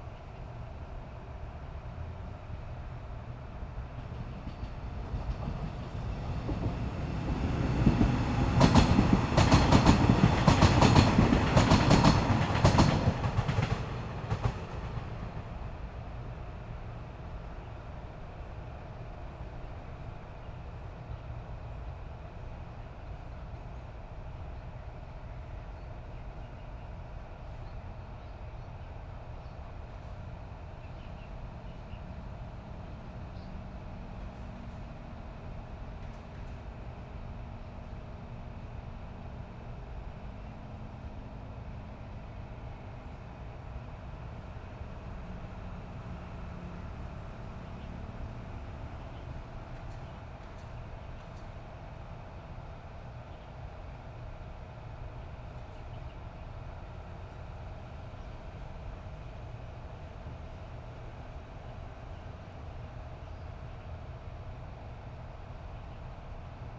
羅東林業文化園區, Yilan County - Trains traveling through
Birdsong, Trains traveling through, Traffic Sound
Zoom H6 MS+ Rode NT4
Yilan County, Taiwan